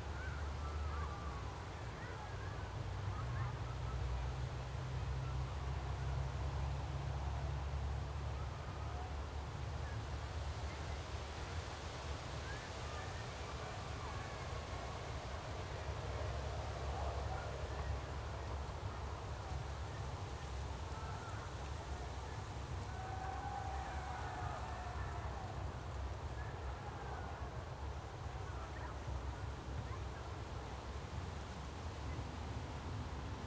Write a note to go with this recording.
place revisited, warm early autumn afternoon, light breeze in the poplars, (Sony PCM D50, DPA4060)